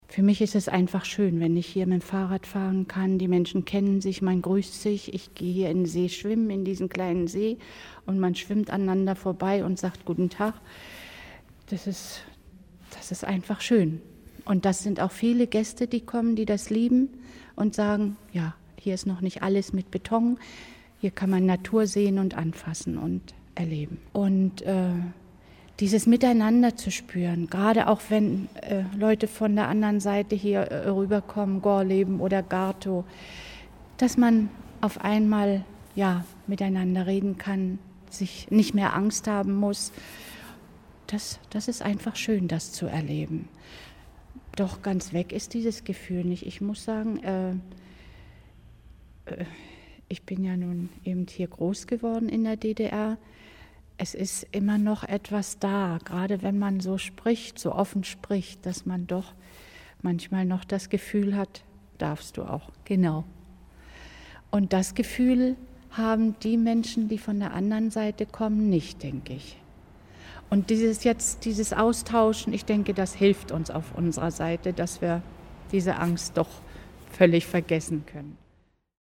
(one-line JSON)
{"title": "lenzen - frau heißler in der katharinenkirche", "date": "2009-08-08 21:12:00", "description": "Produktion: Deutschlandradio Kultur/Norddeutscher Rundfunk 2009", "latitude": "53.09", "longitude": "11.48", "altitude": "21", "timezone": "Europe/Berlin"}